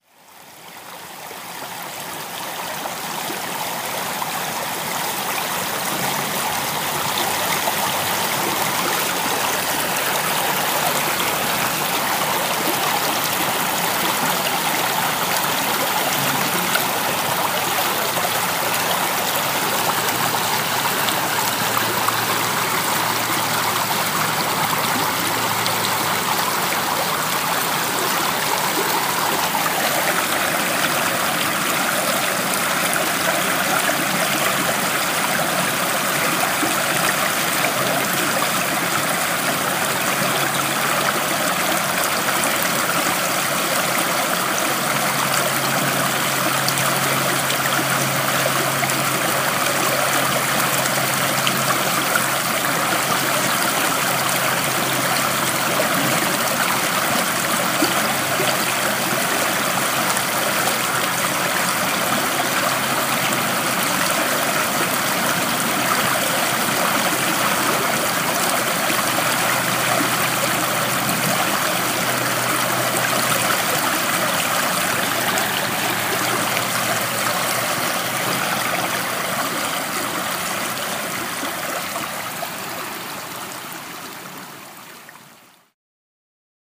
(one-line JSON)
{"title": "Cedar Creek Park, Parkway Boulevard, Allentown, PA, USA - Serene Stream", "date": "2014-12-08 07:35:00", "description": "This sound of water flowing through a stream on the edge of Cedar Creek park is one of the most calming sounds in the vicinity of Muhlenberg College", "latitude": "40.59", "longitude": "-75.51", "altitude": "89", "timezone": "America/New_York"}